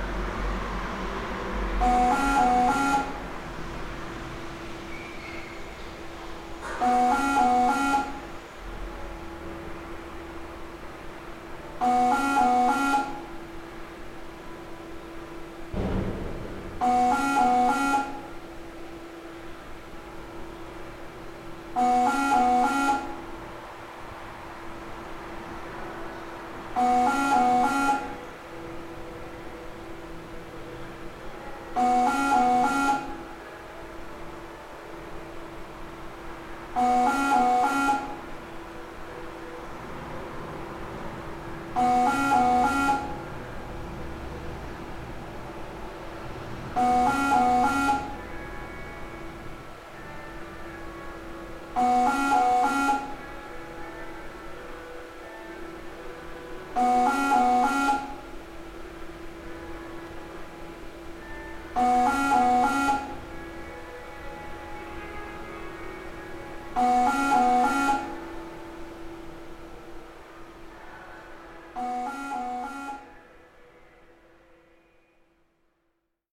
Reverse vending machine located in an underground carpark, located underneath the Kaunas bus station. Apparently stuck or broken, it keeps repeating a constant "not working" sound signal. Recorded with ZOOM H5.
Kauno apskritis, Lietuva, 22 April 2021, 21:16